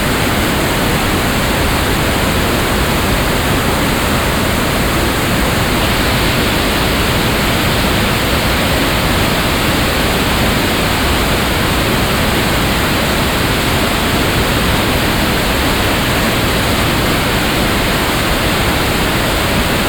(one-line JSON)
{"title": "Gruia, Klausenburg, Rumänien - Cluj, Someșul Mic, smal dam", "date": "2014-05-19 10:00:00", "description": "At the river Someșul Mic, that leads through the city of Cluj at a a small dam. The sound of the hissing, speeded up water.\ninternational city scapes - field recordings and social ambiences", "latitude": "46.77", "longitude": "23.59", "altitude": "336", "timezone": "Europe/Bucharest"}